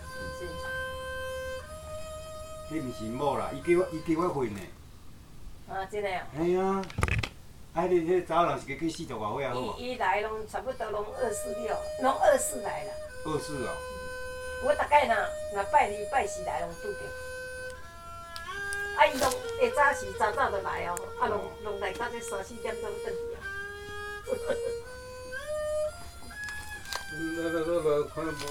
Unnamed Road, Xinshe District, Taichung City, Taiwan - Audio Postcard, Touke Mountain, Dakeng, Taichung, Taiwan 頭嵙山, 大坑, 台中, 台灣
While hiking at Dankeng Mountain, Taichung I heard an Erhu sound from one of the pavillions. Three friends were talking in native Taiwanese language. One of them was practicing erhu. I sat down for ten minutes to rest during this difficult hike and I recorded his play and their chat. I found out they were talking about a friend who used to hike together with the erhu player.
The player immediately quit playing when I took a picture. He must have been too shy as he is still learning to master the instrument.
Recorded at Touke Mountain, Dakeng, Taichung, Taiwan with my Tascam DR-40
Click following link to find more field recordings:
20 November